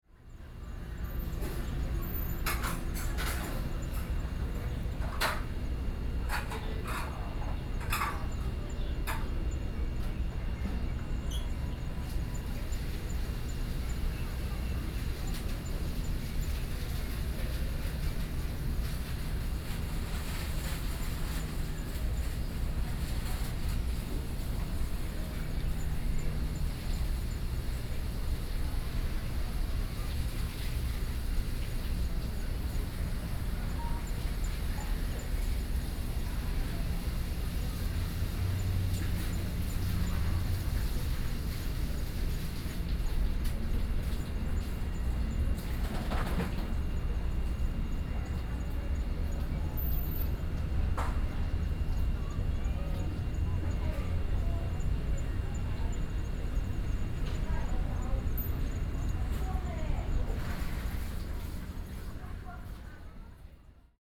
野柳地質公園, New Taipei City - Outside the restaurant kitchen
Outside the restaurant kitchen
New Taipei City, Taiwan, 25 June 2012, ~17:00